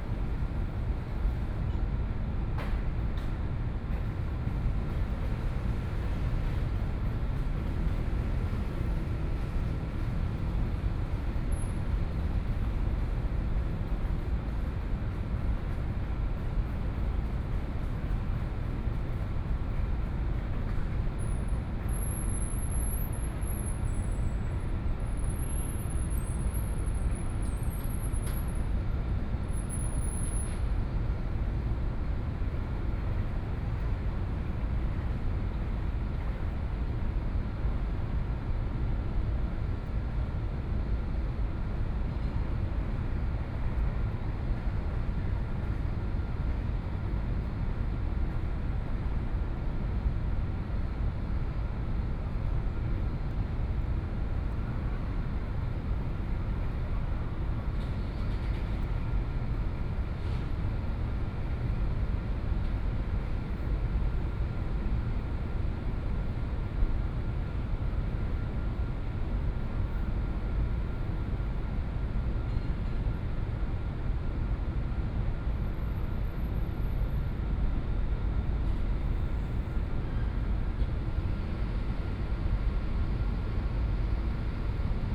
ZhongAn Park, Taipei City - Noise
Environmental Noise, Night in the park
Please turn up the volume a little
Binaural recordings, Sony PCM D100 + Soundman OKM II
Zhongshan District, Taipei City, Taiwan, 28 February 2014